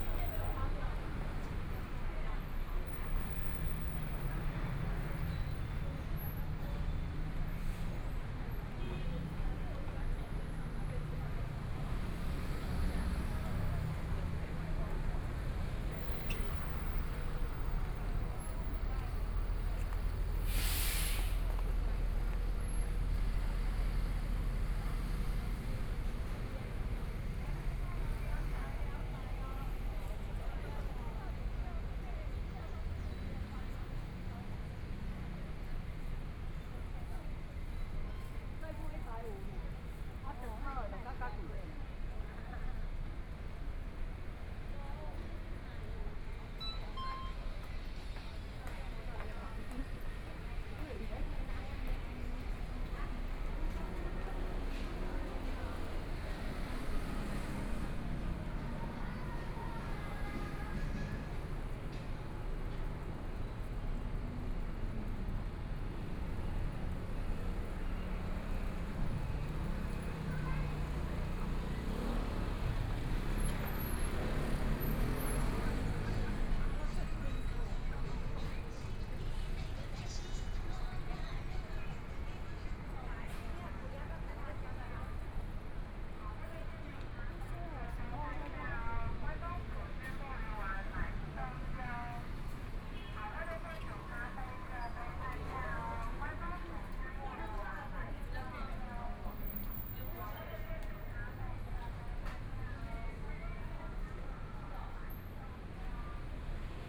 Songjiang Rd., Zhongshan Dist. - soundwalk
from Minquan E. Rd. to Minsheng E. Rd., Traffic Sound, Various shops voices, Construction site sounds, Binaural recordings, Zoom H4n + Soundman OKM II